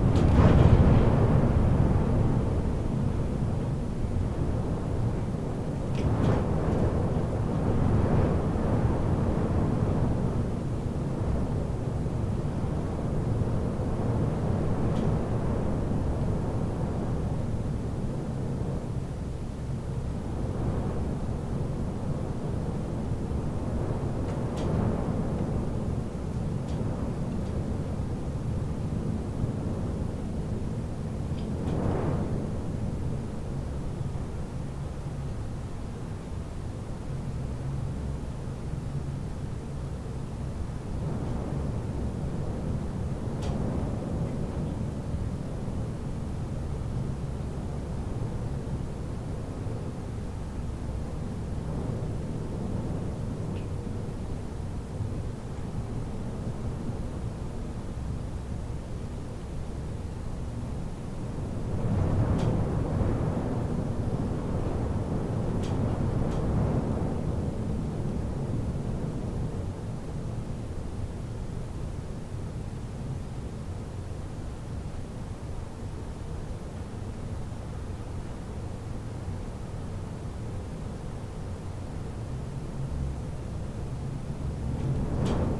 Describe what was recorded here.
night. I awoke. there was snowy storm outside